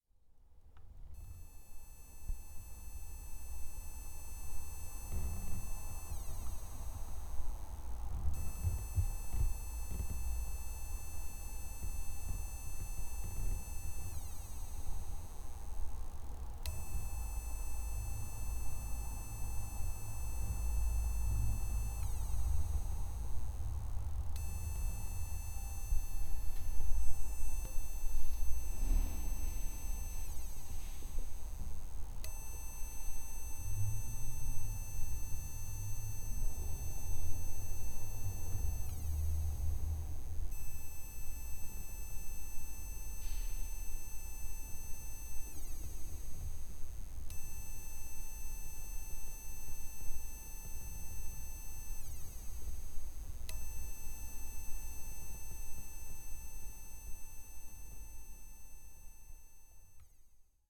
the sound made by a desk lamp with burned-out bulb